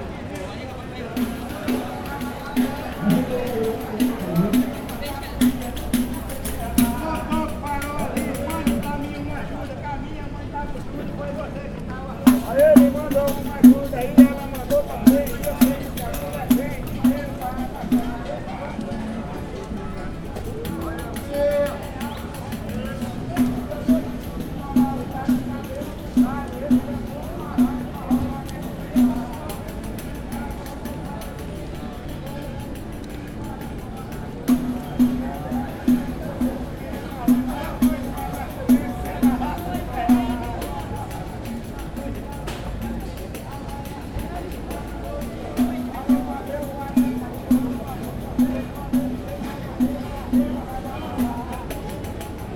Sao Paulo, Sé, street musicians